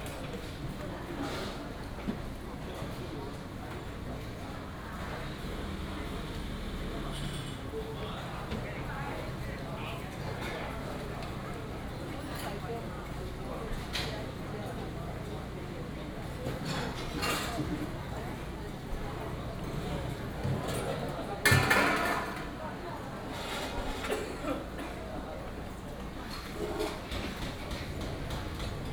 Ln., Huatan St., Huatan Township - Mutton shop
in the traditional market, Mutton shop
Changhua County, Taiwan, 18 March 2017